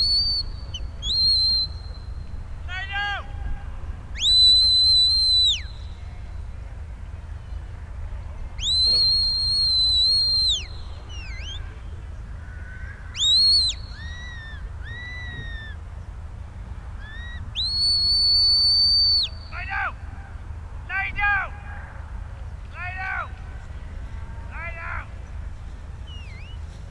Back Ln, York, UK - Ryedale Show ... sheepdog trials ...
Sheepdog trials ... open lavaliers clipped to sandwich box ... plenty of background noise ...
25 July